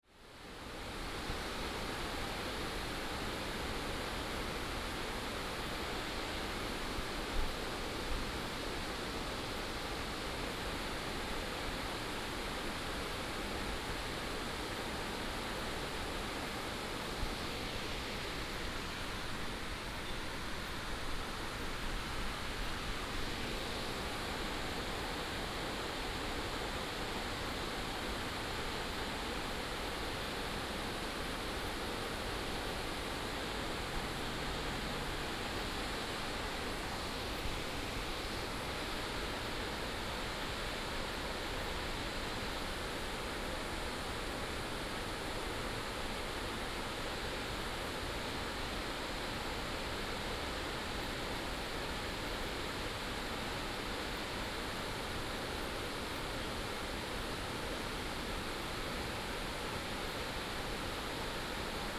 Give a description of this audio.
Facing streams and waterfalls, On the bank